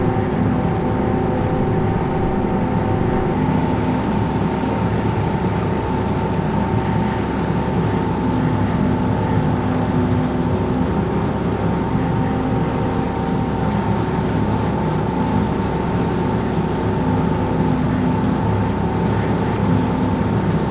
Oostende, Belgique - Shuttle boat
The Oostende harbor is quite big. In aim to help the pedestrian to go on the other side of the city, called Vismijn (literally it means the fish mine), there's a shuttle boat. Recording of the boat on a winter foggy morning, crossing the harbor.
Oostende, Belgium, 16 November 2018